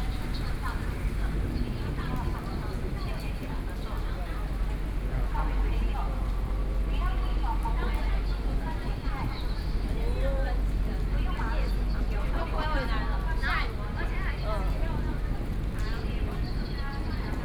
Yilan Station, Yilan City - In the station platform
In the station platform, Rainwater
Sony PCM D50+ Soundman OKM II